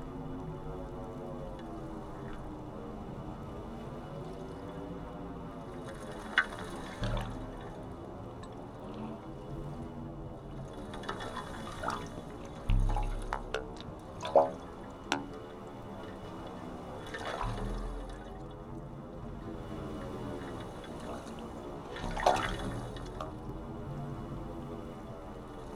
metal pipes in the sea, Istanbul
recording of two metal pipes stuck in the sea on Bugazada.
22 February 2010, ~15:00